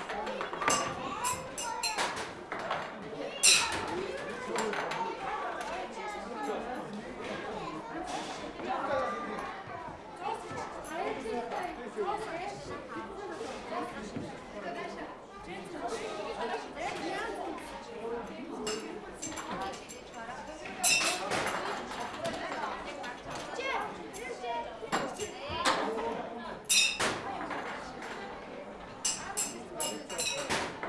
game where little rings had to be thrown on glass bottles, no one succeeded...